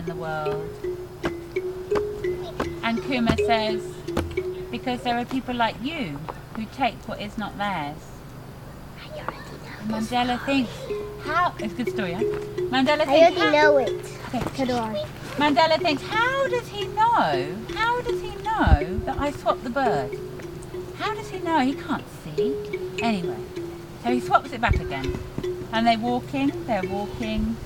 Thorncombe Woods, Dorset, UK - Woodland stories
Dorset Forest School Leader telling stories to children in the woods.
Sounds in Nature workshop run by Gabrielle Fry. Recorded using an H4N Zoom recorder and Rode NTG2 microphone.